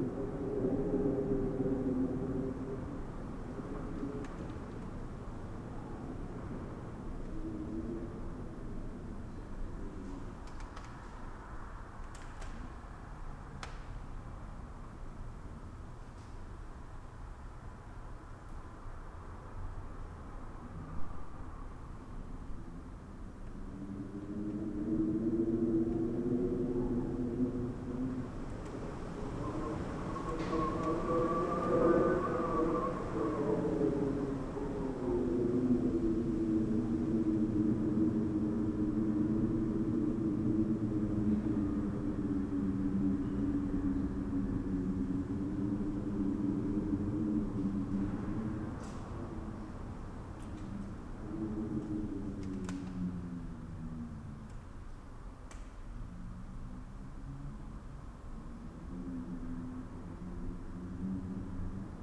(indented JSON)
{
  "title": "Olivais, Portugal - Wind blowing in a resonant space",
  "date": "2014-12-22 19:14:00",
  "description": "Wind blowing in a resonant space (inside the entrance hall of a building with metal doors). Recording with a Blue line AKG MS stereo setup into a Zoom H4n.",
  "latitude": "38.76",
  "longitude": "-9.12",
  "altitude": "85",
  "timezone": "Europe/Lisbon"
}